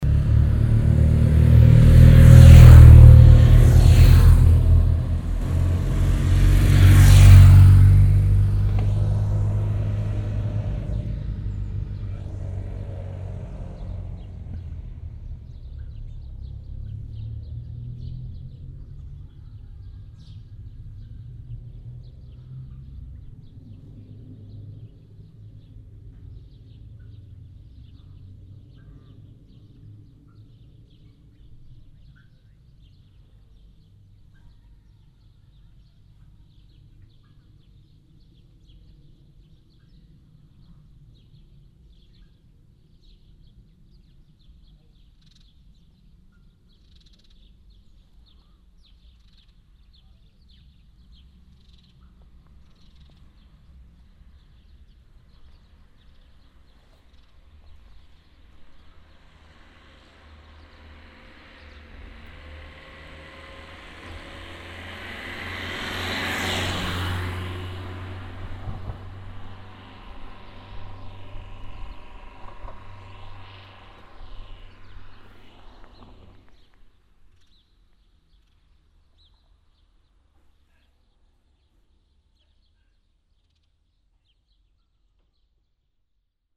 eschweiler, duerfstroos, traffic
On the main street. Two motorbikes and a car passing by on a summer sunday afternoon.
Eschweiler, Duerrefstrooss, Verkehr
Auf der Hauptstraße. Zwei Motorräder und ein Auto fahren vorbei an einem Sonntagnachmittag im Sommer.
Eschweiler, Duerrefstrooss, trafic
Sur la rue principale. Deux motos et une voiture qui passent, un dimanche après-midi en été.
Project - Klangraum Our - topographic field recordings, sound objects and social ambiences
Eschweiler, Luxembourg